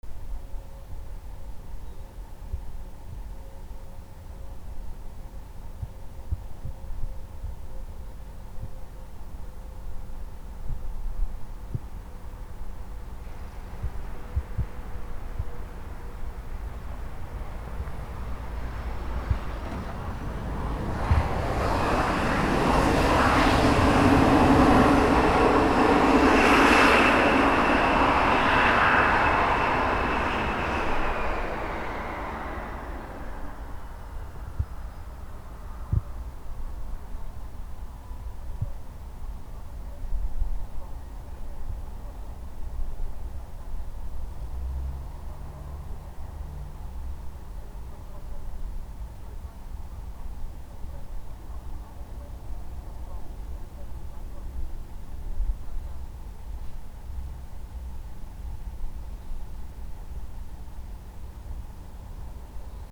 Khibiny Airport, Murmansk region, Russia - Plane Landing
Plane lands, quite winter morning. Recorded w/ zoomh1